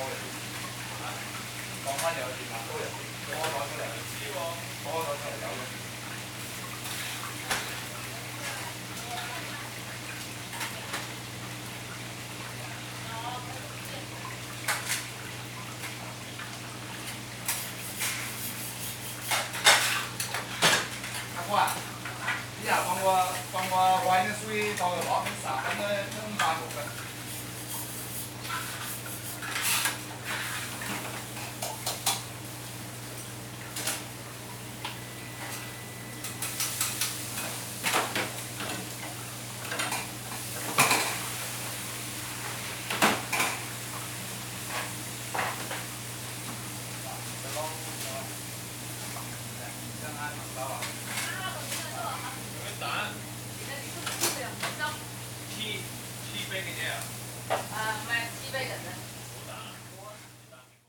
Recorded next to the counter while waiting for an order of Chinese food, using Zoom H4n.
Canterbury, New Zealand/Aotearoa, 2 May 2013